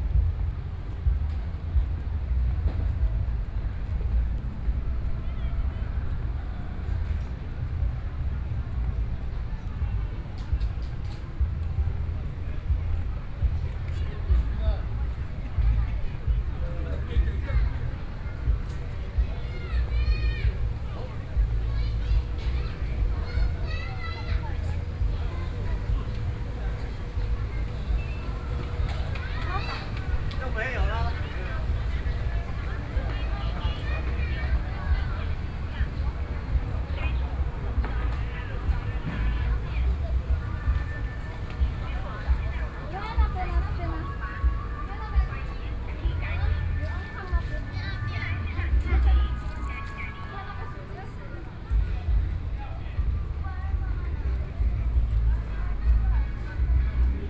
中山公園, 羅東鎮集祥里 - Walking through the park
Walking through the park, Traffic Sound